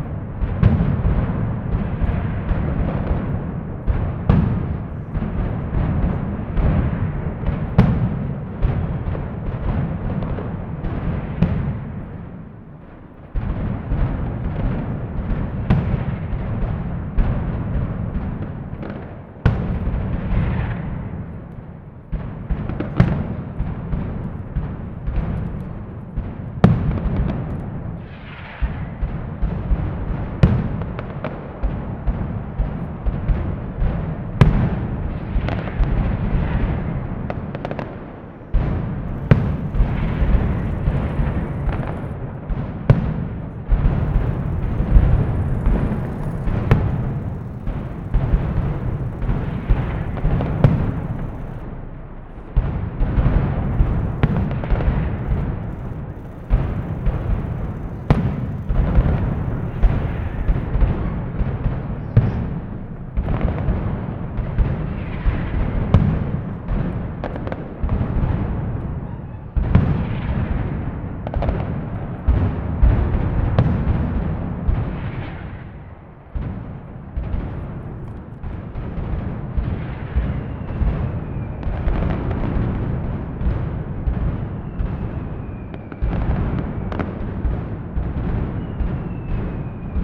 every day before the big event "las fallas" there s a fireworks with a certain sound choreography....
Valencia, Spain, 10 March 2016, 14:00